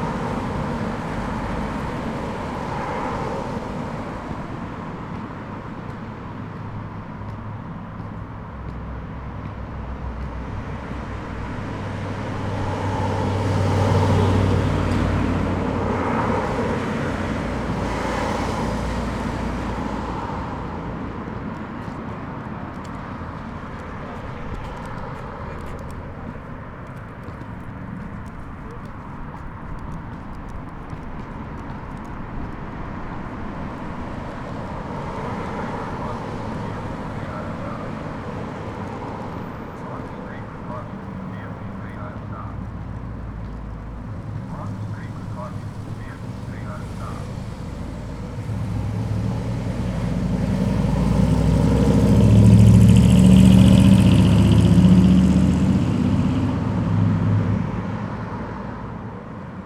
Canyon Park, Boulder, CO, USA - Roadside Chillin